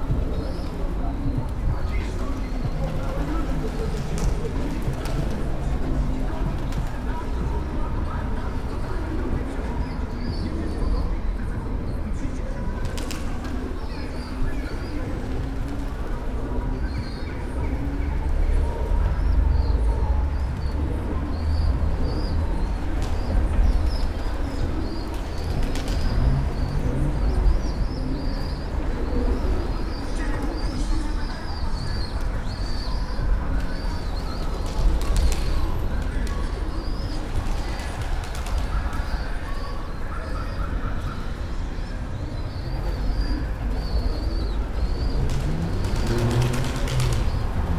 Daniela Keszycki bridge, Srem - under the bridge
recording under the bridge between massive concrete pillars. plenty of piegons living there. you can hear their chirps and wing flaps bouncing of the sides of the pillars. at one point one of the birds drops a big piece of bread into the river. it's a busy part of town so there are a lot of sounds of traffic on the bridge. a group of teenagers walking on the bridge listening to hiphop on a portable speaker. The way the music reverberated under the bridge is vey interesting. (Roland R-07 internal mics)
Śrem, Poland, 1 September 2018